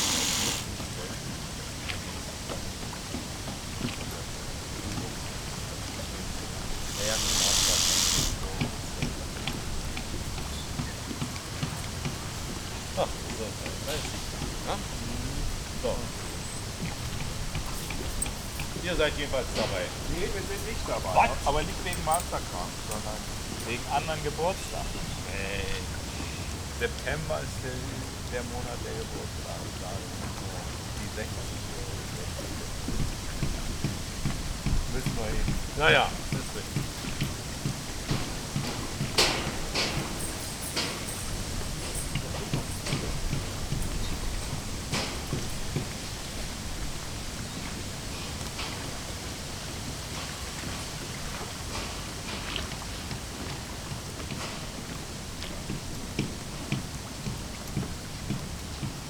berlin wall of sound-boathouse on the havel. j.dickens.160909